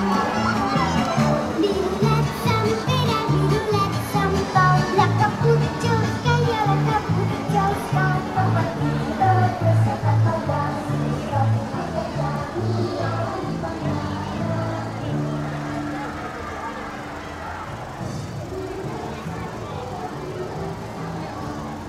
{
  "title": "Manlleu, Barcelona, España - Nit de reis",
  "date": "2013-01-06 20:18:00",
  "description": "Nit de reis",
  "latitude": "42.00",
  "longitude": "2.28",
  "altitude": "461",
  "timezone": "Europe/Madrid"
}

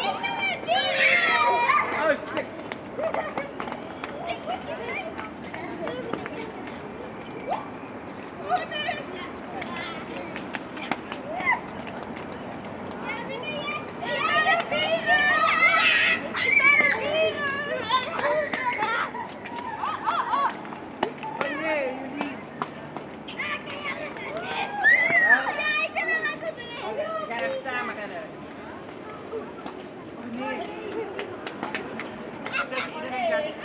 {
  "title": "Leuven, Belgique - Children playing",
  "date": "2018-10-13 14:10:00",
  "description": "Children playing at the square, people enjoy the sun, traffic noise.",
  "latitude": "50.89",
  "longitude": "4.70",
  "altitude": "18",
  "timezone": "Europe/Brussels"
}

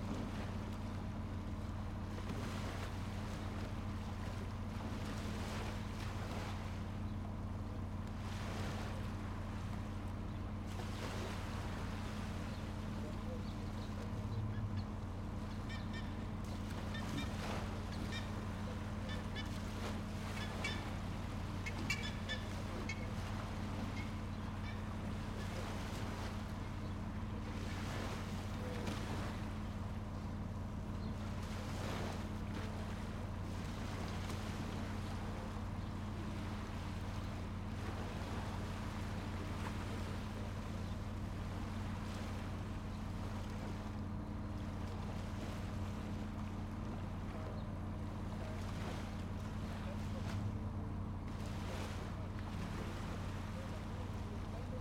{
  "title": "Arturo Prat, Corral, Valdivia, Los Ríos, Chili - LCQA AMB CORRAL MORNING HARBOR FERRY VOICES BIRDS MS MKH MATRICED",
  "date": "2022-08-27 10:30:00",
  "description": "This is a recording of the harbour located in Corral. I used Sennheiser MS microphones (MKH8050 MKH30) and a Sound Devices 633.",
  "latitude": "-39.88",
  "longitude": "-73.42",
  "altitude": "9",
  "timezone": "America/Santiago"
}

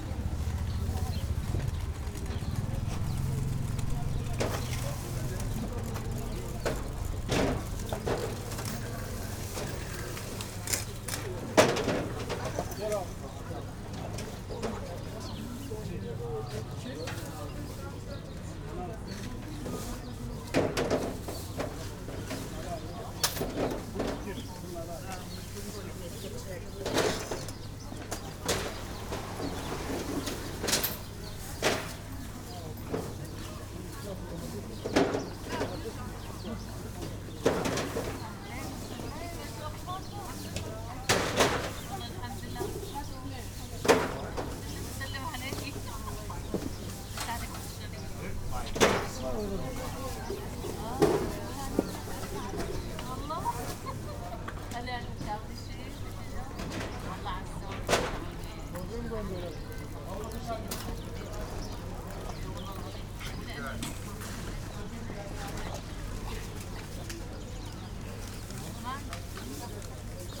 {
  "title": "Maybachufer, weekly market - fruit stand, market ambience",
  "date": "2020-03-31 15:45:00",
  "description": "Maybachufer market ambience in corona / covid-19 times. compare to earlier recordings, the difference is remarkable, which of course is no surprise.\n(Sony PCM D50, Primo EM172)",
  "latitude": "52.49",
  "longitude": "13.42",
  "altitude": "38",
  "timezone": "Europe/Berlin"
}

